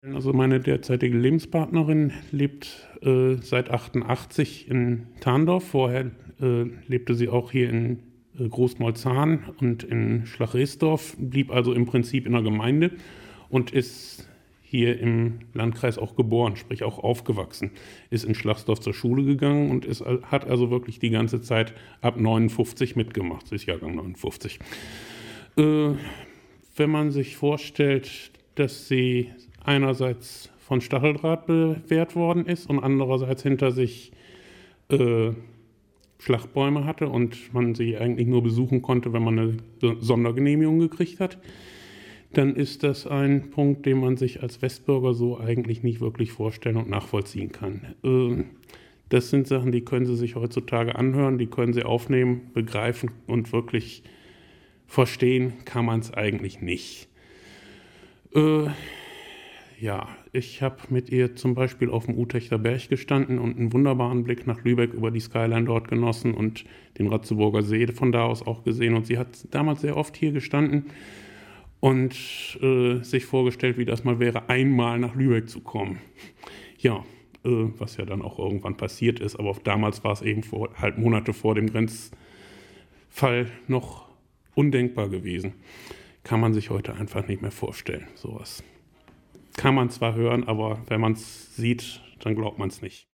schlagsdorf - im grenzhuus
Produktion: Deutschlandradio Kultur/Norddeutscher Rundfunk 2009
Schaalsee Biosphere Reserve, Schlagsdorf, Germany, 8 August 2009